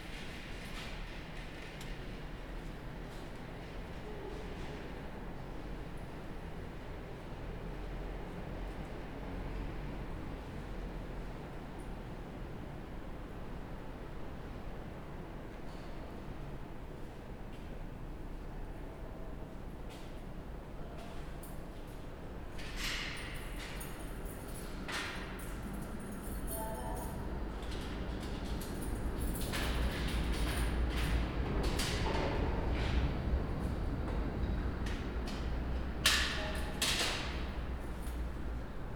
Kon. Maria Hendrikaplein, Gent, België - Fietsenstalling Gent St. Pieters
Underground bicycle parking, trains passing overhead